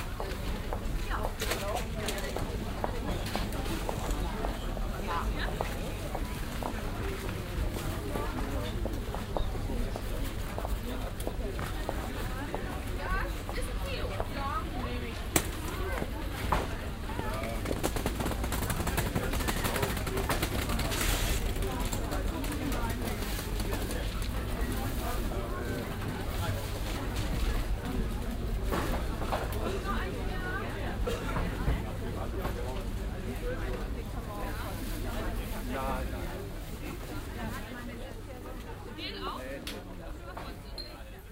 marktambience osnarbrück, katharinenkirche
project: social ambiences/ listen to the people - in & outdoor nearfield recordings
katharinenkirche, markt